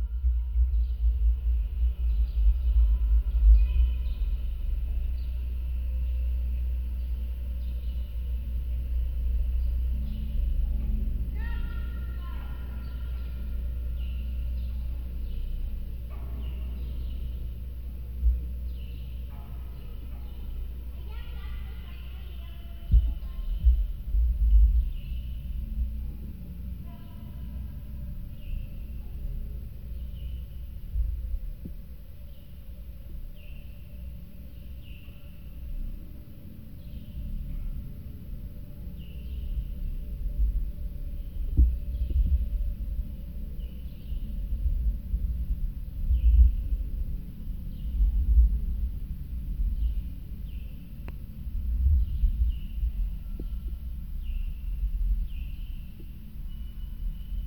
the sounscape caught on a fence with contact microphones
Georgioupoli, Greece, 3 May 2019